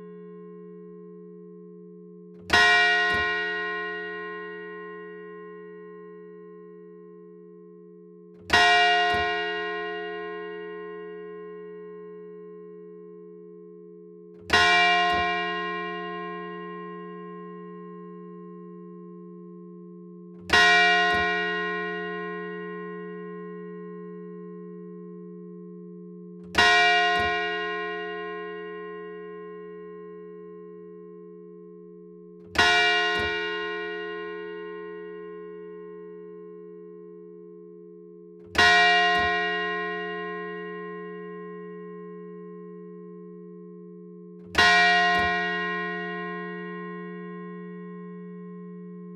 Randonnai (Orne)
Église St-Malo
Le Glas